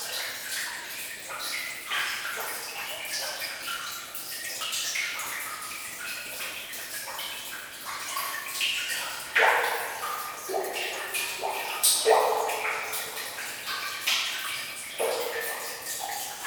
Andenne, Belgique - Underground mine
Short soundscape of an underground mine. Rain into the tunnel and reverb.